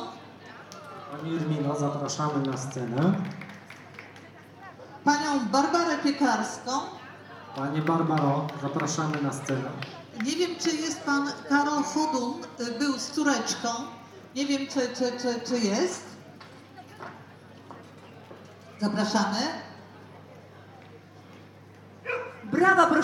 Jana Kilińskiego, Białystok, Poland - (127 BI) Unknow fair
Binaural recording of an unknown fair or festival.
Recorded with Soundman OKM on Sony PCM D100